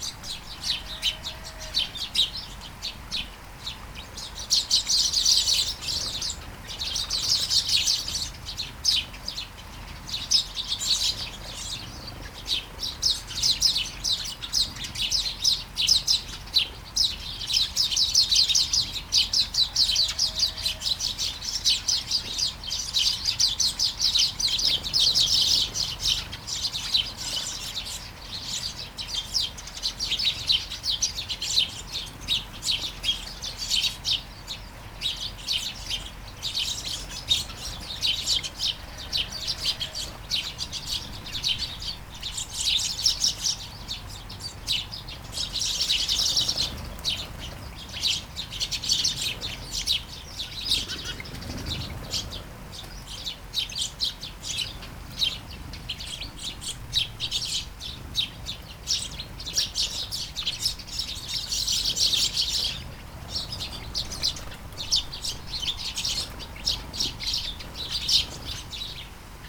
Flying sparrows in the garden, early morning. Light shower.
Vols de moineaux dans le jardin, au petit matin. Pluie fine.